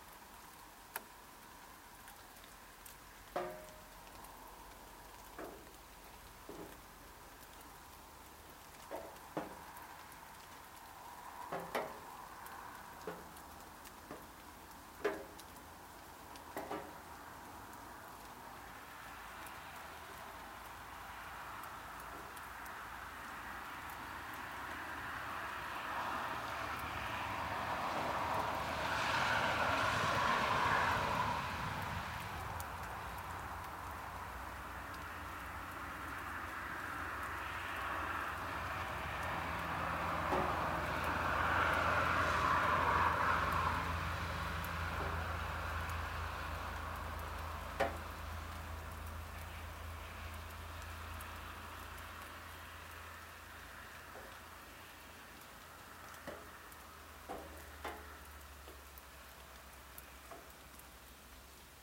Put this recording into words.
light summer rain, tin roof, trees. stafsäter recordings. recorded july, 2008.